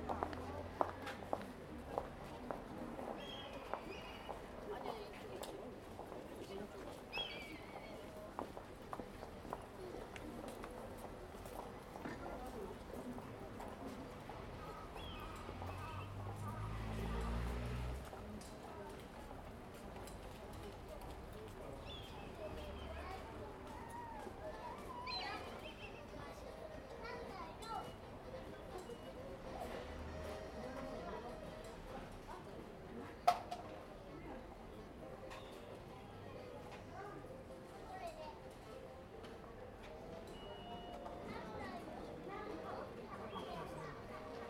Katasekaigan, Fujisawa-shi, Kanagawa-ken, Japonia - Enoshima station
A sunny sunday next to the Enoshima train station, a beloved weekend refuge for all of Tokyo.
Fujisawa-shi, Kanagawa-ken, Japan, 15 March